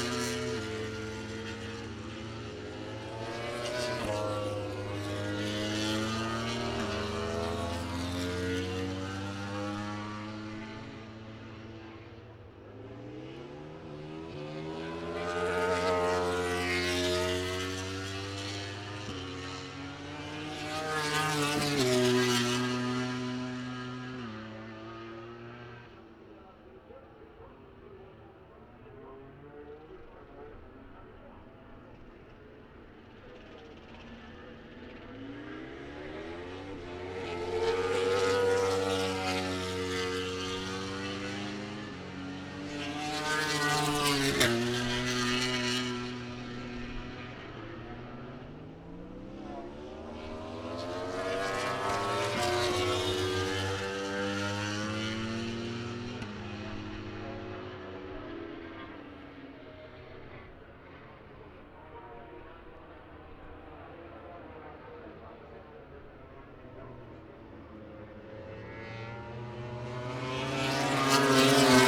British Motorcycle Grand Prix ... moto grand prix ... free practice two ... copse ... lavalier mics clipped to sandwich box ...